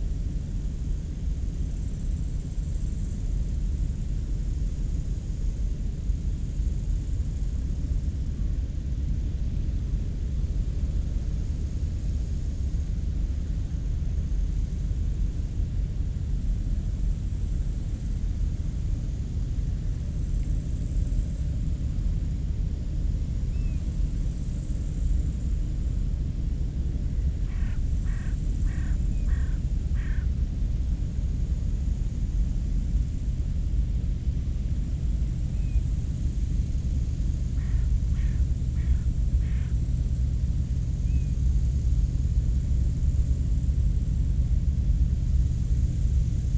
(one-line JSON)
{"title": "Nieuw Namen, Netherlands - Verdronken Land van Saeftinghe", "date": "2018-08-04 11:30:00", "description": "Locust singing on the high grass of the Saeftinghe polder. A big container from Hamburg Süd is passing on the schelde river.", "latitude": "51.35", "longitude": "4.23", "altitude": "7", "timezone": "GMT+1"}